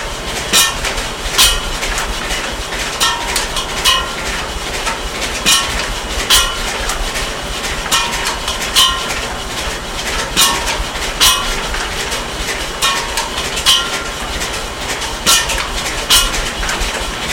Moulin du Greux mode demploi visite guidée avec Bruno Verdière Never Record 07/2008
Moulin du Greux